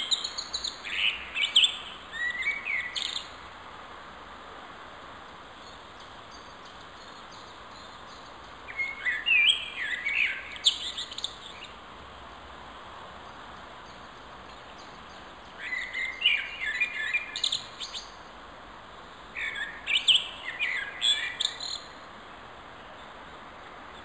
Žilinský lesopark Slovenská republika - Forest park, Žilina